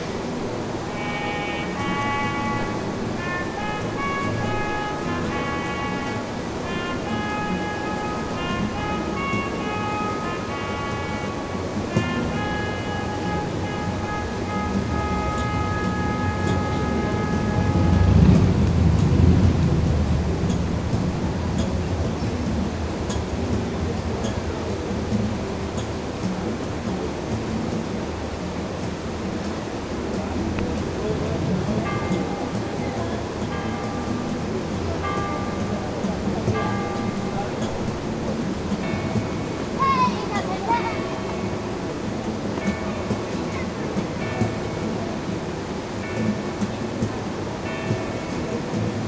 Český Krumlov, Tschechische Republik - Krumauer Turmfanfare
Český Krumlov, Czech Republic